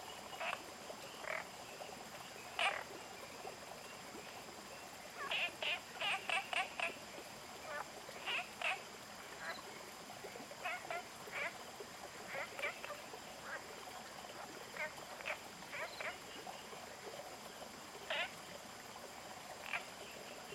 Ecological pool Renxiang(仁祥生態池), Puli, Taiwan - Ecological pool Renxiang
Family Pararasbora moltrechti。
Zoon H2n (XY+MZ) (2015/09/05 003), CHEN, SHENG-WEN, 陳聖文
Nantou County, Taiwan